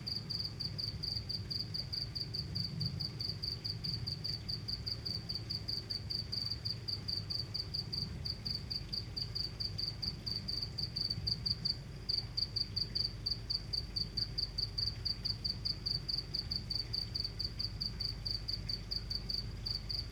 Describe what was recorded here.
small path, called "Sigge Gass", a cricket at night, at the edge of the butchery, (Sony PCM D50, Primo EM172)